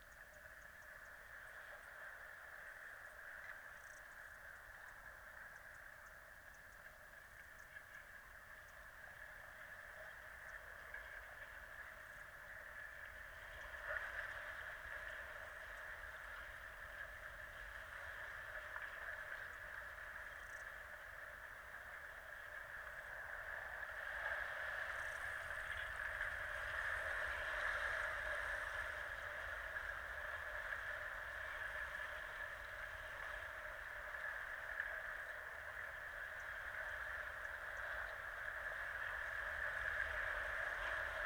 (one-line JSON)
{"title": "Royal National Park, NSW, Australia - (Spring) Inside The Entrance To Marley Lagoon", "date": "2014-09-24 15:45:00", "description": "A very quiet underwater soundscape at the beginning of Marley Lagoon, I'm sure I would get a lot more sounds if I was able to get deeper into the lagoon. I recorded in this spot nearly a year ago and the sounds are very similar.\nTwo JrF hydrophones (d-series) into a Tascam DR-680", "latitude": "-34.11", "longitude": "151.14", "altitude": "7", "timezone": "Australia/Sydney"}